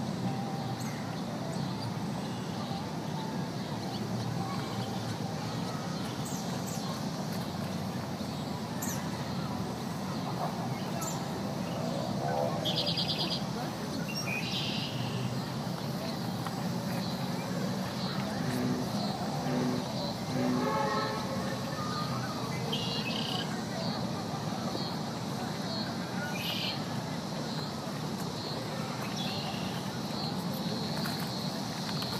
Humboldt Park Lagoon, Chicago, IL, USA - 20160718 194705
July 2016